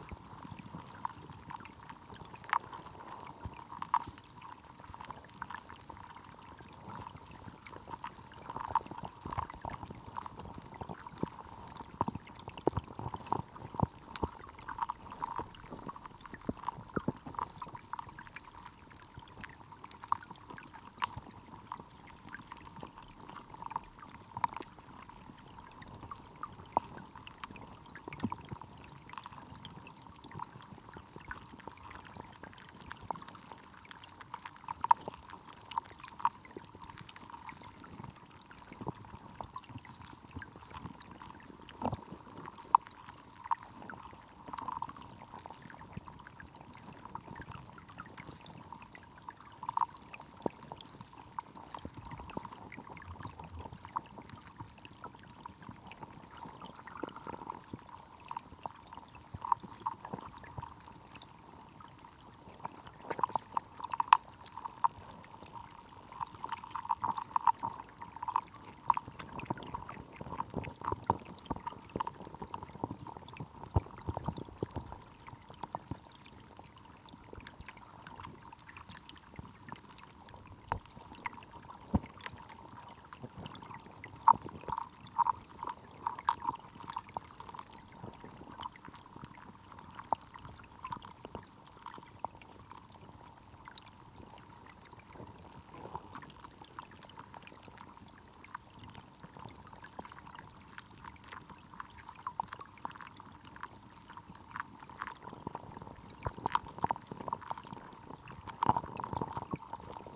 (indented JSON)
{
  "title": "Birštonas, Lithuania, river Nemunas underwater",
  "date": "2022-06-19 19:50:00",
  "description": "Hydrophone in the \"father\" of Lithuanian rivers - river Nemunas.",
  "latitude": "54.62",
  "longitude": "24.02",
  "altitude": "57",
  "timezone": "Europe/Vilnius"
}